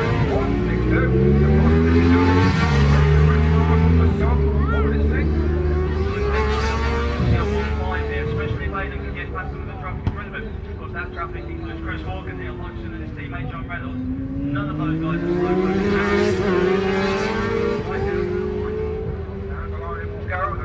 British Superbikes ... 2000 ... race two ... Snetterton ... one point stereo mic to minidisk ... time approx ...
Norwich, United Kingdom